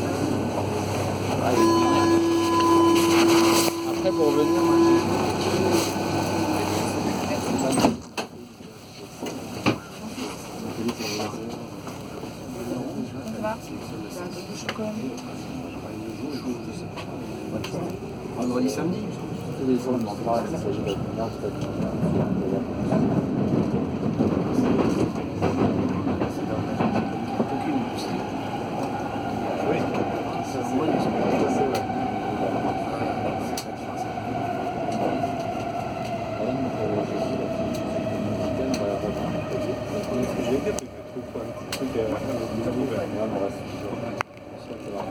Rue de Menilmontant, Paris, France - Ménilmontant subway
In the subway from Menilmontant to Alexandre Dumas.